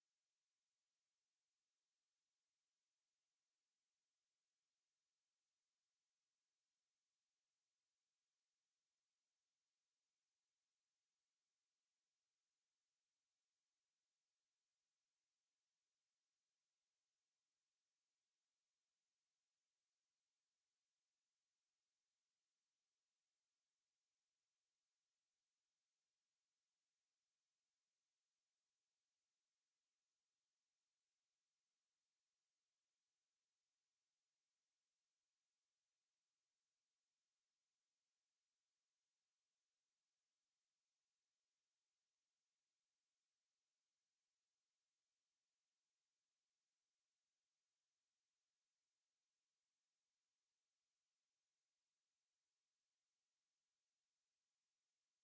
berlin, walterhöferstraße: zentralklinik emil von behring, raucherbereich - the city, the country & me: emil von behring hospital, smoking area
phoning man
the city, the country & me: september 6, 2012